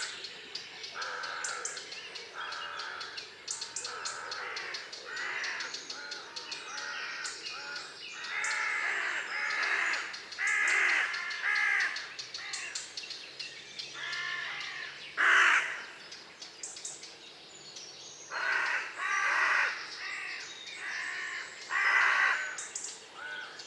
{
  "title": "Lac de la Liez - Dawn chorus",
  "date": "2014-07-12 05:00:00",
  "description": "Dawn chorus recorded after a night in my tent, on the border of the lake.",
  "latitude": "47.87",
  "longitude": "5.42",
  "altitude": "358",
  "timezone": "Europe/Paris"
}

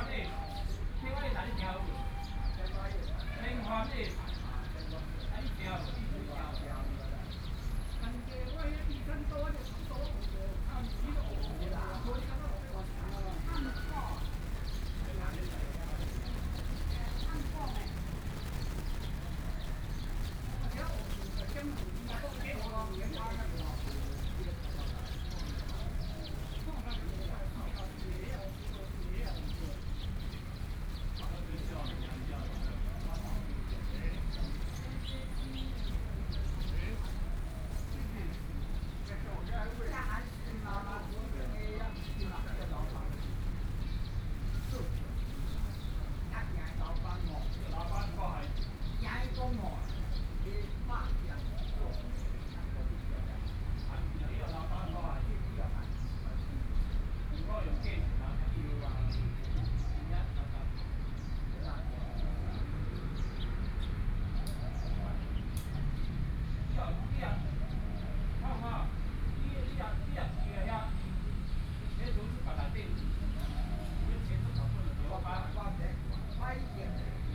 {
  "title": "Mínquán Road, Taoyuan City - in the Park",
  "date": "2013-08-12 12:31:00",
  "description": "Group of elderly people chatting, in the Park, Sony PCM D50 + Soundman OKM II",
  "latitude": "24.99",
  "longitude": "121.31",
  "altitude": "100",
  "timezone": "Asia/Taipei"
}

